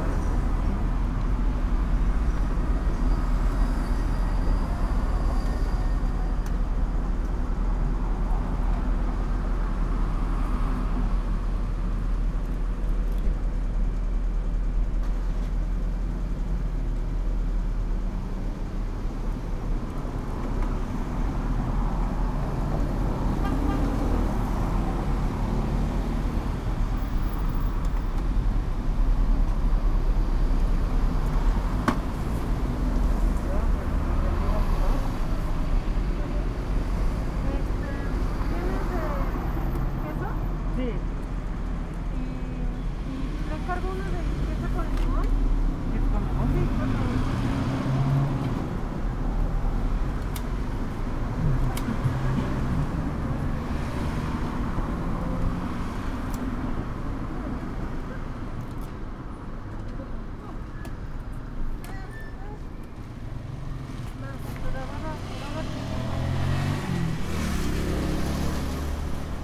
Av. Panorama, Panorama, León, Gto., Mexico - Nieves de Panorama, 18 de junio 2021.
Panorama Icecreams, June 18, 2021.
There is a street stand where you can gen icecreams from natural fruits made by the Mr. who attends you at the business. His name is Mickey.
I made this recording on June 18th, 2021, at 6:01 p.m.
I used a Tascam DR-05X with its built-in microphones and a Tascam WS-11 windshield.
Original Recording:
Type: Stereo
Es un carrito de nieves hechas de frutas naturales por el mismo señor que te atiende. Se llama Mickey.
Esta grabación la hice el 18 de junio de 2021 a las 18:01 horas.